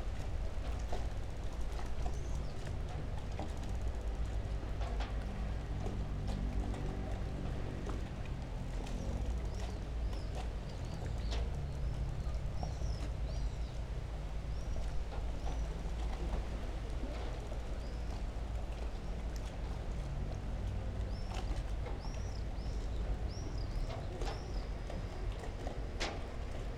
Kos, Greece, at a pier
11 April, 15:40